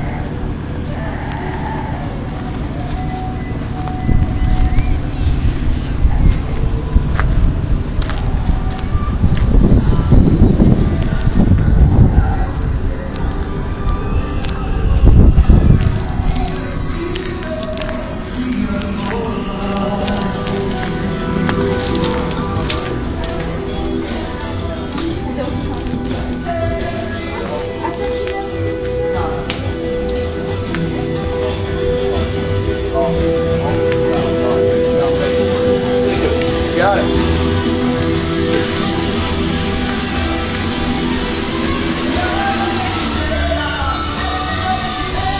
jefferson square, then soundgarden record shop
street sounds, soundgarden
Syracuse, NY, USA, 30 January, 02:08